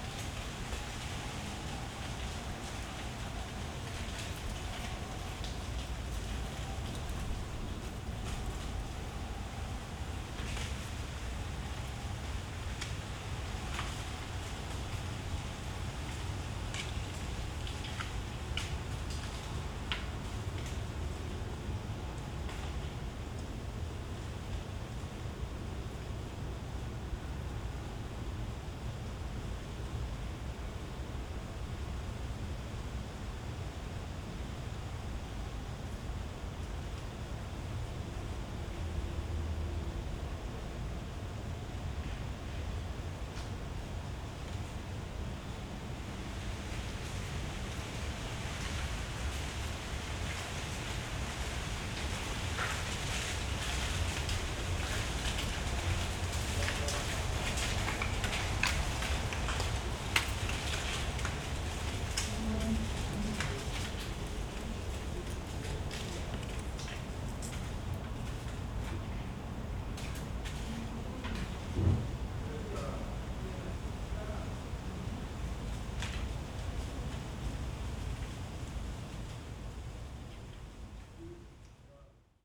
Berlin Bürknerstr., backyard window - falling leaves
wind, falling leaves, some inside voices, in the backyard at night.
Berlin, Germany